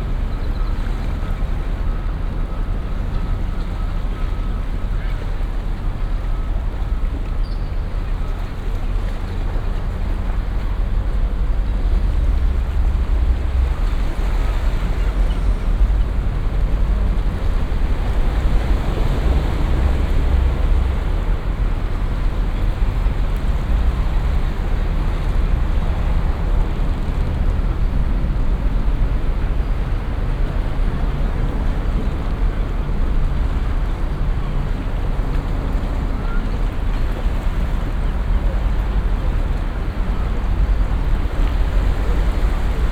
Funchal, Marina - morning seagulls
fair-sized flock of seagulls occupying the roof of the building across the harbor. waves splashing on big chunks of concrete. three guys finishing their Friday party, coming to sit at the end of the pier, drinking beer and talking vigorously.